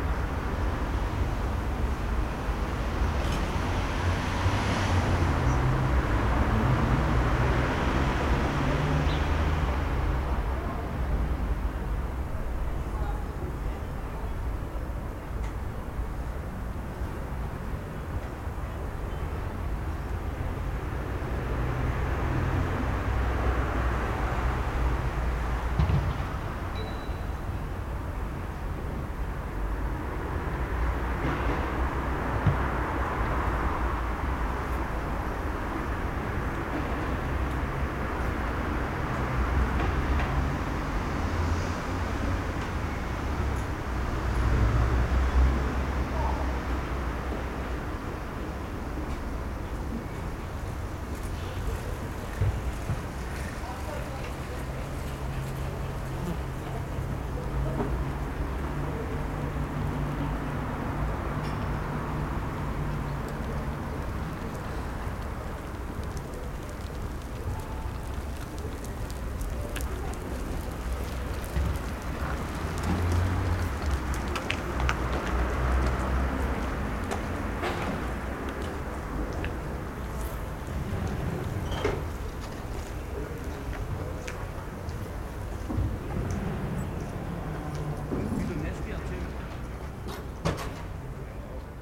leipzig lindenau, spielplatz am karl-heine-kanal nahe gießerstraße
ein spielplatz am karl-heinekanal an der brücke gießerstraße.
Leipzig, Deutschland, 31 August 2011, 15:30